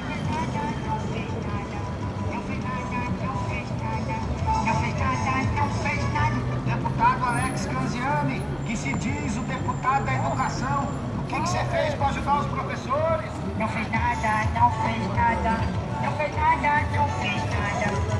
Calçadão de Londrina: Boca Aberta - Boca Aberta
Panorama sonoro: figura pública, vereador de Londrina, conhecido como “Boca Aberta” parado com sua bicicleta motorizada equipada com uma caixa de som em uma esquina do Calçadão de Londrina. O vereador conversava com pedestres. Sua caixa de som emitia críticas a políticos paranaenses, como o governador Beto Richa.
Sound panorama: Public figure, alderman of Londrina, known as "Open mouth" stopped with his motorized bicycle equipped with a sound box in a corner of the Boardwalk of Londrina. The councilman was talking to pedestrians. Its issuance box issued by a politician from Parana, such as Governor Beto Richa.